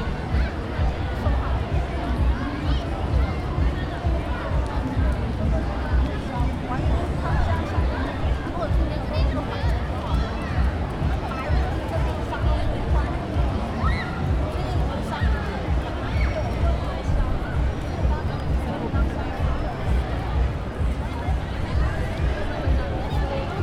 {"title": "neoscenes: spiral fountain with kids", "date": "2009-11-28 13:41:00", "latitude": "-33.87", "longitude": "151.20", "altitude": "10", "timezone": "Australia/NSW"}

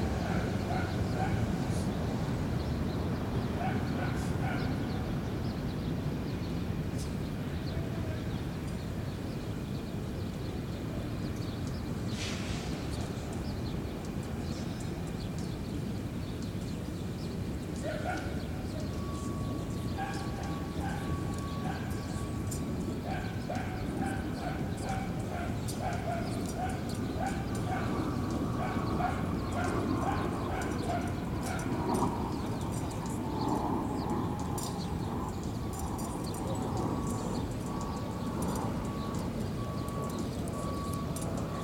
{"title": "Saint Nicholas Park, Harlem, Street, New York, NY, USA - Saint Nicholas Park Ambience", "date": "2022-04-16 16:30:00", "description": "Ambient sounds in Saint Nicholas Park, Harlem, NYC. Dogs barking, distant conversation, ambulance siren, birds singing, planes flying by, car horns honking, and a passing bicycle rider walking their bike. Partly sunny, light wind, ~55 degrees F. Tascam Portacapture X8, A-B internal mics facing north, Gutmann windscreen, Ulanzi MT-47 tripod. Normalized to -23 LUFS using DaVinci Resolve Fairlight.", "latitude": "40.82", "longitude": "-73.95", "altitude": "37", "timezone": "America/New_York"}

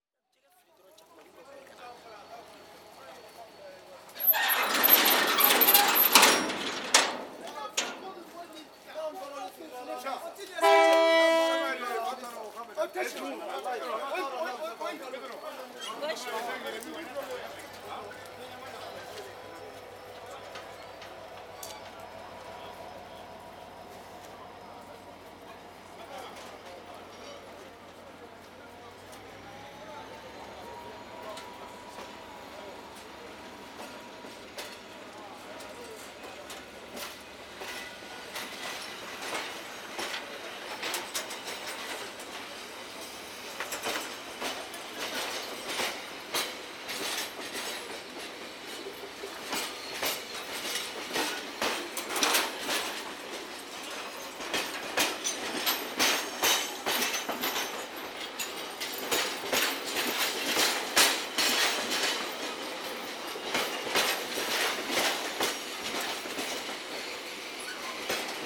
{"title": "Bamako Railway Station, Bamako, Mali - Gare ferroviaire de Bamako - Mali", "date": "2004-02-20 14:30:00", "description": "Bamako - Mali\nGare ferroviaire - ambiance sur le quai.", "latitude": "12.65", "longitude": "-8.00", "altitude": "334", "timezone": "Africa/Bamako"}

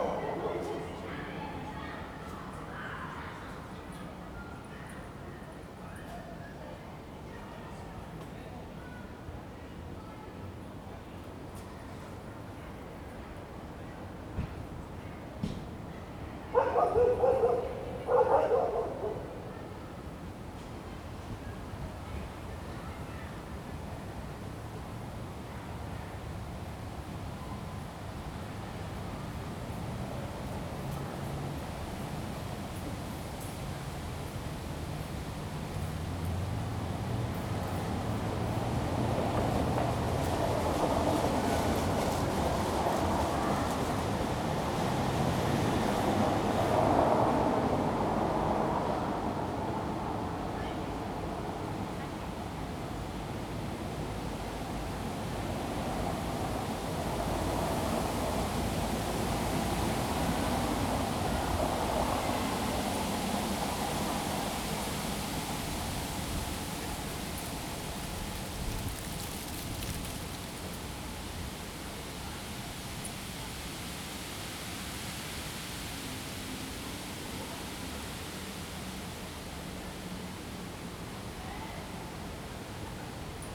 {"title": "Winterfeldtplatz, Berlin, Deutschland - Winterfeldtplatz (Evening)", "date": "2013-09-23 19:00:00", "description": "evening on the winterfeldtplatz in berlin-schöneberg. you can hear the wind in the trees and the bells of the church ringing 7PM.", "latitude": "52.50", "longitude": "13.36", "altitude": "39", "timezone": "Europe/Berlin"}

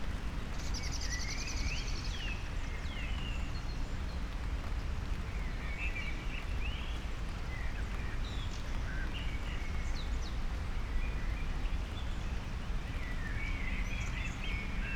{"title": "around swimming pools, mariborski otok - rain, birds, umbrella", "date": "2014-04-27 19:25:00", "latitude": "46.57", "longitude": "15.61", "altitude": "258", "timezone": "Europe/Ljubljana"}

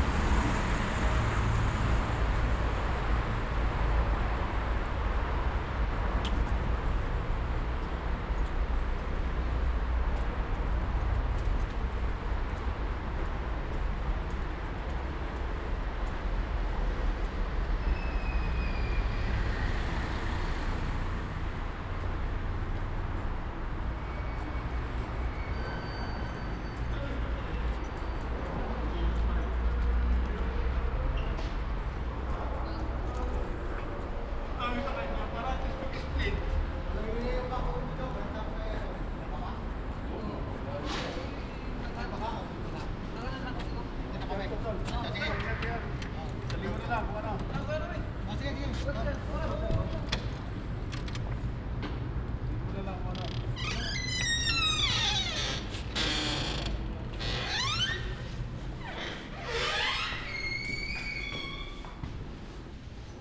{"title": "Ascolto il tuo cuore, città. I listen to your heart, city, Chapter CLXXXIV - Valentino Park winter soundwalk in the time of COVID19\": soundwalk, first recording of 2022.", "date": "2022-01-09 17:26:00", "description": "\"Valentino Park winter soundwalk in the time of COVID19\": soundwalk, first recording of 2022.\nChapter CLXXXIV of Ascolto il tuo cuore, città. I listen to your heart, city\nSunday, January 9th, 2022. San Salvario district Turin, from Valentino park to home\nStart at 5:26 p.m. end at 5:49 p.m. duration of recording 23’09”\nThe entire path is associated with a synchronized GPS track recorded in the (kmz, kml, gpx) files downloadable here:", "latitude": "45.05", "longitude": "7.68", "altitude": "237", "timezone": "Europe/Rome"}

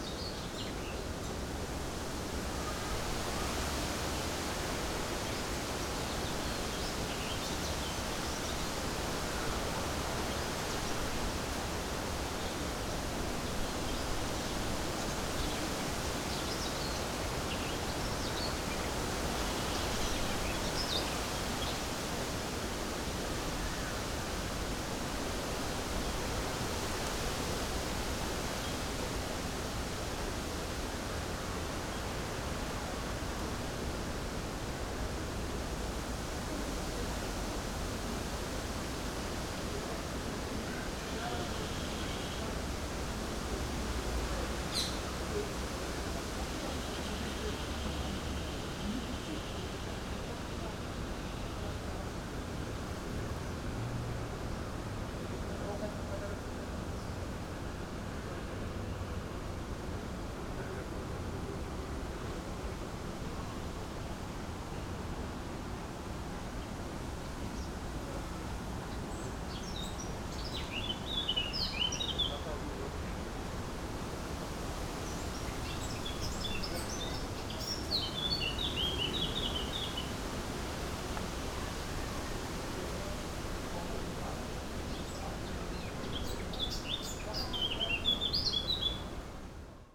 {"title": "lisbon goethe institut - garden, wind in the trees", "date": "2010-07-01 20:15:00", "description": "wind in the beautiful trees, in the garden of the goethe institute lisbon.", "latitude": "38.72", "longitude": "-9.14", "altitude": "69", "timezone": "Europe/Lisbon"}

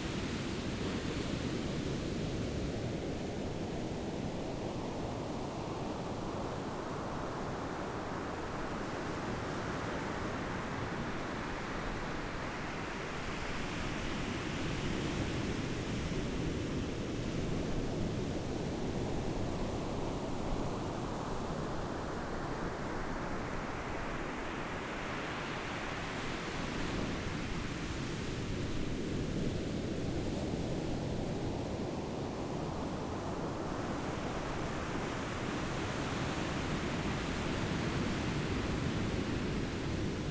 {"title": "坂里沙灘, Beigan Township - Sound of the waves", "date": "2014-10-13 13:23:00", "description": "Sound of the waves, In the beach, Windy\nZoom H6 XY", "latitude": "26.21", "longitude": "119.97", "altitude": "71", "timezone": "Asia/Taipei"}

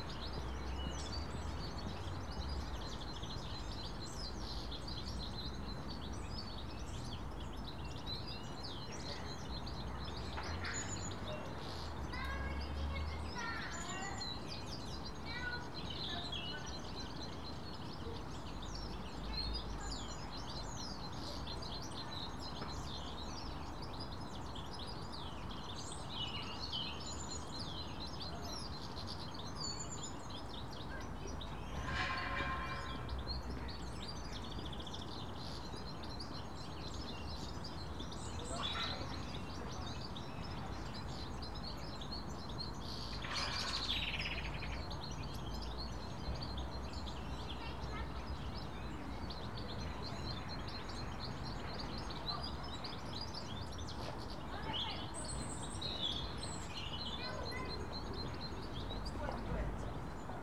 {"title": "Birdcage Walk, Clifton, Bristol, UK - Birds in Birdcage Walk", "date": "2015-02-11 15:53:00", "description": "Birds and people in Birdcage Walk\n(zoom H4n)", "latitude": "51.45", "longitude": "-2.61", "altitude": "76", "timezone": "Europe/London"}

